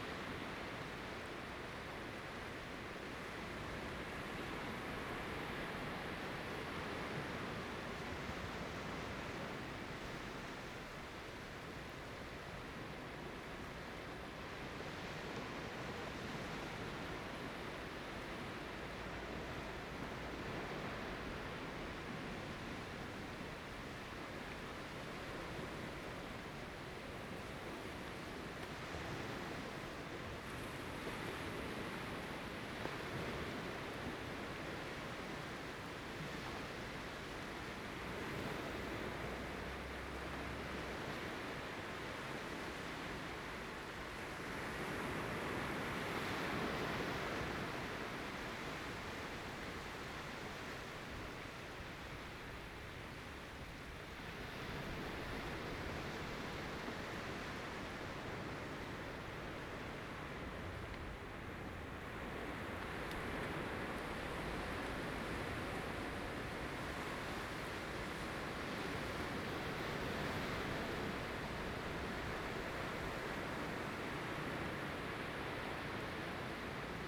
Shihlang Diving Area, Lüdao Township - Diving Area
On the coast, Sound of the waves
Zoom H2n MS +XY
Lüdao Township, Taitung County, Taiwan